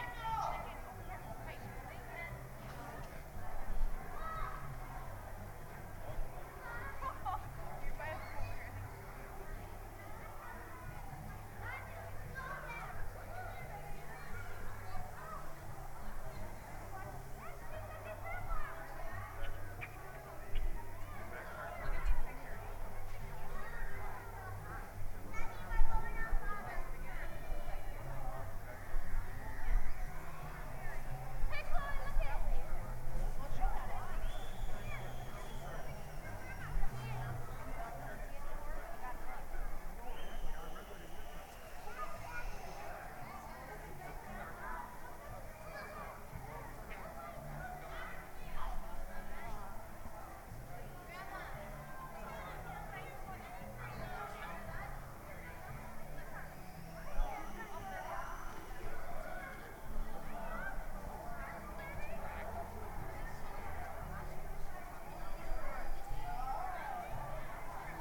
United Methodist Church, Main St., Bear Lake, MI - Easter Egg Hunt in the Snow
After a moment's wait, about 60 children and their parents hunt for plastic eggs on the Saturday before Easter Sunday. Several inches of snow remain on the ground, after a big snowstorm a few days earlier. Music and a costumed Easter Bunny are part of the festivities. Stereo mic (Audio-Technica, AT-822), recorded via Sony MD (MZ-NF810, pre-amp) and Tascam DR-60DmkII.
Bear Lake, MI, USA